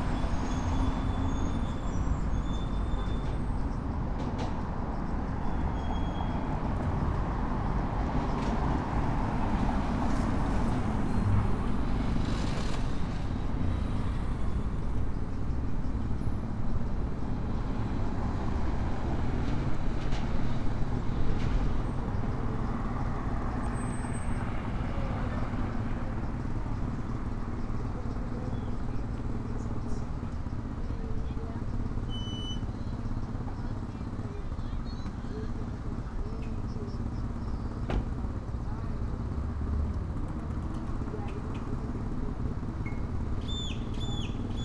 Traffic on Avenida Las Torres after two years of recording during COVID-19 in phase 2 in León, Guanajuato. Mexico. In front of the Plaza Mayor shopping center.
I made this recording on june 9th, 2022, at 5:33 p.m.
I used a Tascam DR-05X with its built-in microphones and a Tascam WS-11 windshield.
Original Recording:
Type: Stereo
Esta grabación la hice el 9 de junio 2022 a las 17:33 horas.